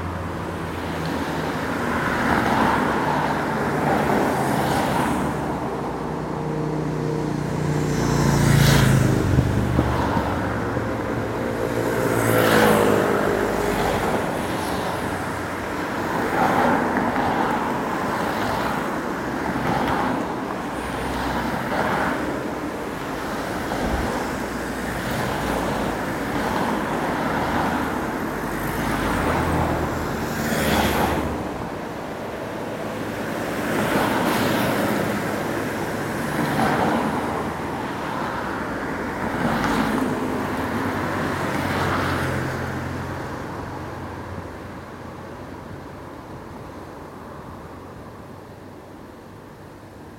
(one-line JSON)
{"title": "london, traffic at river thames", "description": "recorded july 18, 2008.", "latitude": "51.48", "longitude": "-0.15", "altitude": "9", "timezone": "GMT+1"}